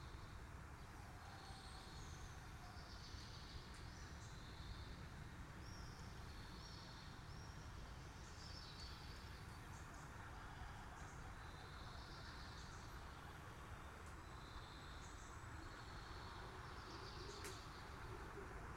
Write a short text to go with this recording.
Night birds in urban surrounding. Recorded with SD mixpre6 and a pair of 172 primo clippys (omni mics) in AB stereo setup.